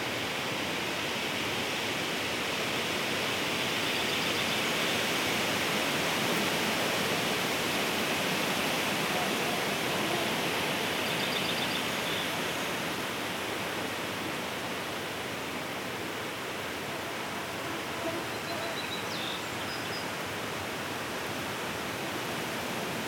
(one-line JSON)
{"title": "forest-birds-insects-noise of trees, White Sea, Russia - forest-birds-insects-noise of trees", "date": "2014-06-10 21:50:00", "description": "forest-birds-insects-noise of trees.\nВ лесу, шум деревьев, пение птиц, комары.", "latitude": "65.19", "longitude": "39.96", "altitude": "4", "timezone": "Europe/Moscow"}